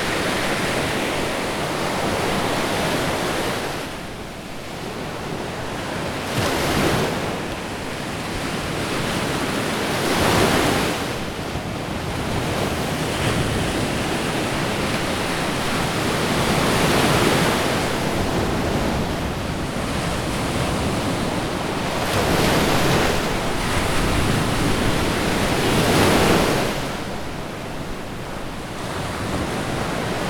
Wyspa Sobieszewska, Gdańsk, Poland - sea waves
Fale morskie, Sea waves
Warsztaty Ucho w Wodzie, Wyspa Skarbów Gak, Noc Muzeów 2015
16 May 2015, 22:00